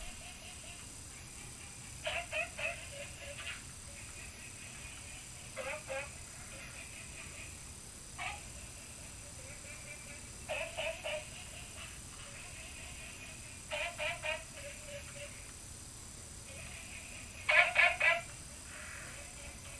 青蛙ㄚ 婆的家, Puli Township - Frog calls
Frog calls
Binaural recordings
Sony PCM D100+ Soundman OKM II